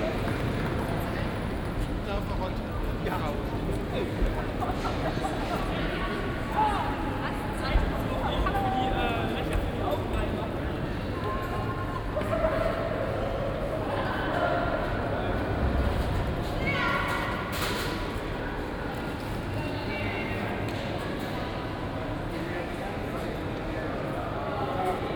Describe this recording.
Bielefeld, main station hall anbience. my train from Cologne to Berlin was cancelled due to a failure, so i took the chance to have a short break at this city, where i've never been before, but have passed 1000 times. (tech note: Olympus LS5, OKM2+A3, binaural)